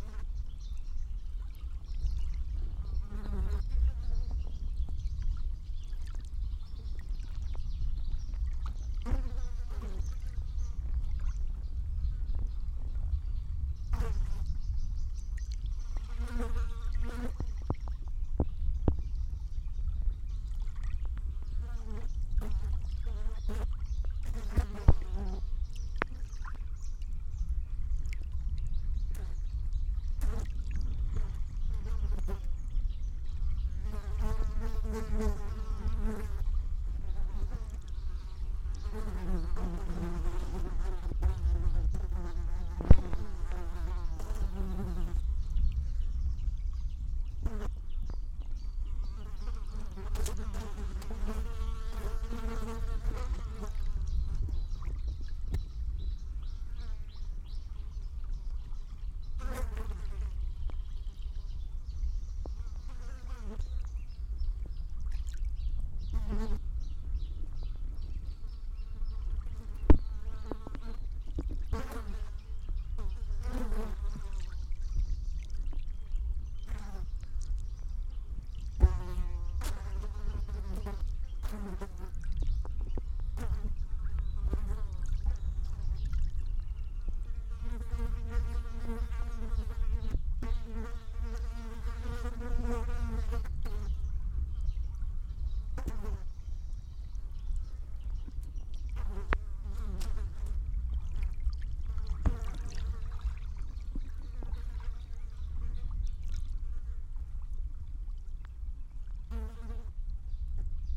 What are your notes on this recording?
dead fish on a coast. two contact mics under the corpse and two omnis above it...the feast of the flies